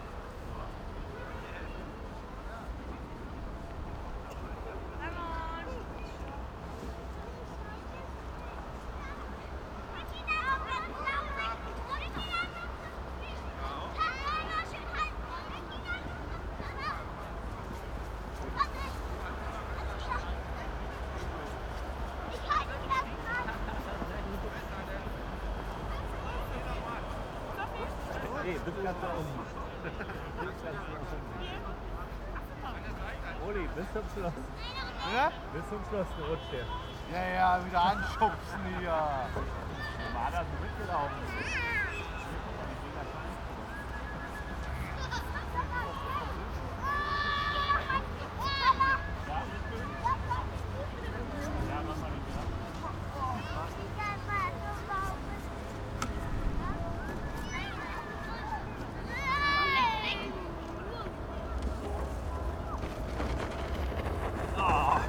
berlin, volkspark am weinberg - winter evening
winter evenig, snow, children and parents sledging in the dark